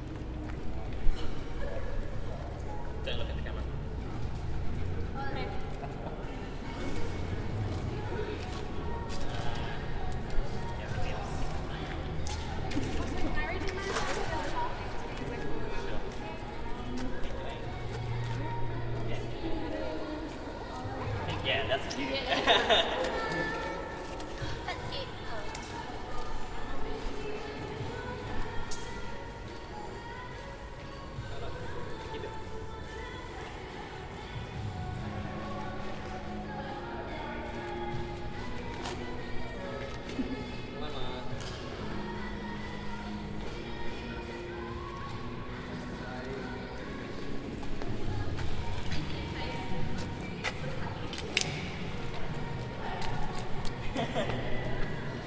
Kilkenny, South Australia - Video and Photo Shoot in a Derelict Factory
Recorded during a photo and music video shoot in a derelict factory. Large enclosed space with lots of gravel and dust and pigeon poop on the ground (and dead pigeons). You can hear the pigeons cooing and flying around in the rafters if you listen carefully.
28 October 2017